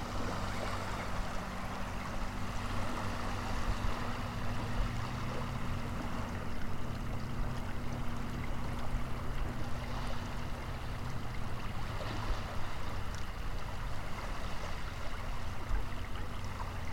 Sound of the Lochness Monster. Recording with Love from the beach
BC, Canada, 28 November 2013